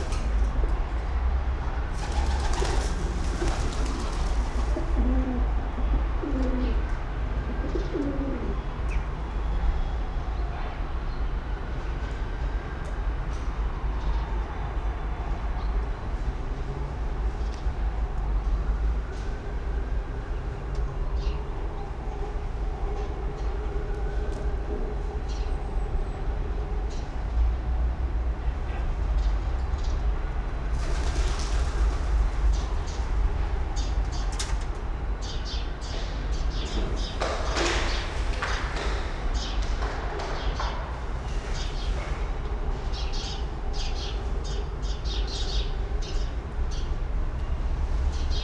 Cluj-Napoca, centre, backyard - Cluj-courtyard-ambient
Sound of the morning city center from the courtyard of Casa Municipala de Cultura